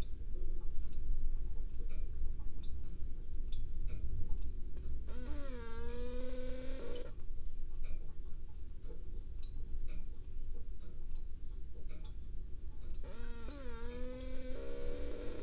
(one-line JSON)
{"title": "water filter in 3 parts - water filter part 1", "date": "2022-03-31 13:09:00", "description": "Part 1 This is a 2 hour 30 min recording in 3 parts.\nThe water filter is protagonist with squealing tight throat to lush fat, sonority, while the ensemble ebbs and flows in this rich, bizarre improvisation: the grandfather clock measures; the pressure cooker hisses and sighs; the wind gathers pace to gust and rage; vehicles pass with heavy vibration; the Dunnock attempts song from the rambling rose; the thermostat triggers the freezer’s hum; children burst free to the playground; a boy-racer fancies his speed; rain lashes and funnels from the roof; a plastic bag taunts from its peg on the line, as the wind continues to wuther.\nCapturing and filtering rain water for drinking is an improvement on the quality of tap water.", "latitude": "52.29", "longitude": "1.16", "altitude": "55", "timezone": "Europe/London"}